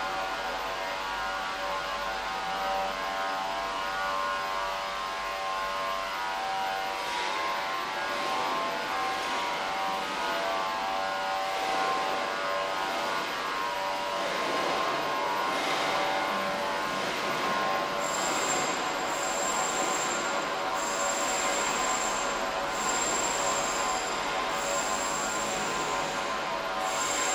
Dresden, Germany - Work in Church
8 October 2013, 11:00